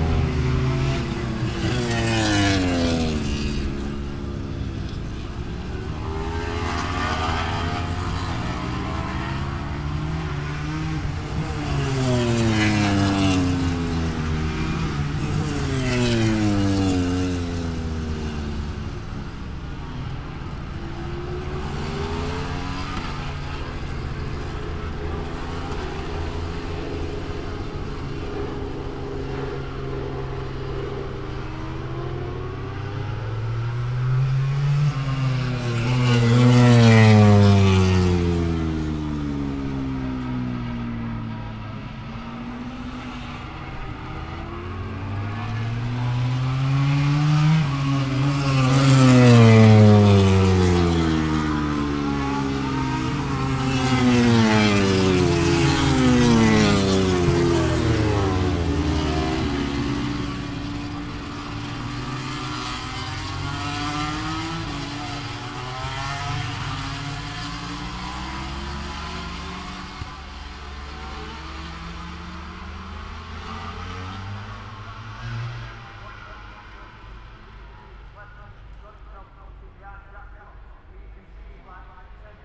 moto grand prix qualifying one ... Vale ... Silverstone ... open lavalier mics clipped to clothes pegs fastened to sandwich box on collapsible chair ... umbrella keeping the rain off ... very wet ... associated noise ... rain on umbrella ... music from onsite disco ... etc ...